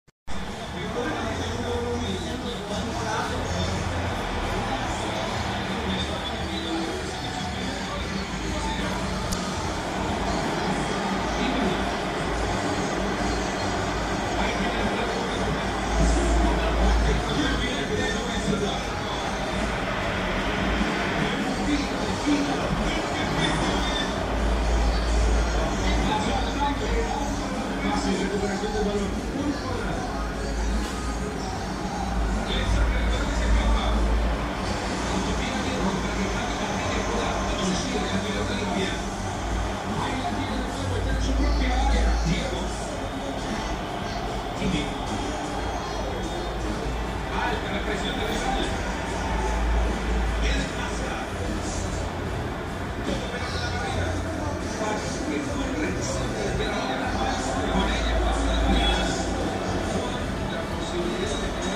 Cra., Villavicencio, Meta, Colombia - Local de entretenimiento WEBOX
Sonido ambiente de local de entretenimiento basado en consolas de vídeo juegos, llamado WEBOX que abre de diez de la mañana a diez de la noche en la sexta etapa de la esperanza.